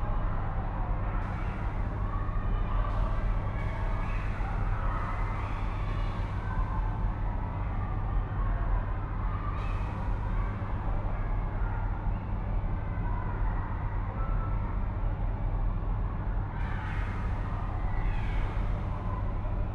Lörick, Düsseldorf, Deutschland - Düsseldorf, GGS Lörick, gym hall
Inside the gym hall of an elementary school during a school break. The sound of the childrens voices reverbing in the empty space with the soft humming of the ventilation and some clicks from the neon lights. To the end some distant attacks at the window and wooden door and the ringing of the gym's door bell.
This recording is part of the intermedia sound art exhibition project - sonic states
soundmap nrw -topographic field recordings, social ambiences and art places